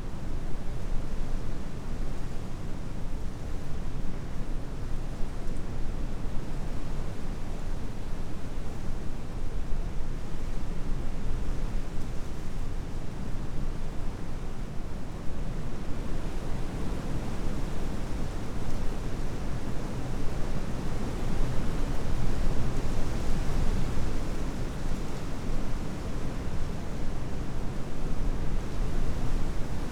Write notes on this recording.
moor landscape, strong wind heard in a shelter, (Sony PCM D50, Primo EM172)